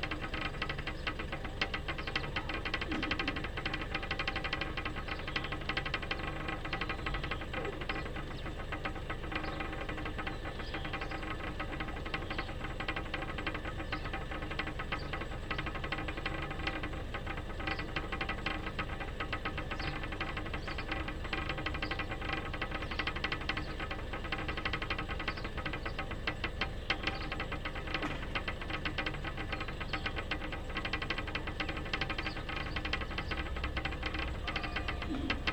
storkow: am kanal - the city, the country & me: vibrating electrical pillar box nearby the lock of storkow
vibrating electrical pillar box, two boys throwing stones on the ice of the frozen canal
the city, the country & me: february 26, 2011
26 February, ~17:00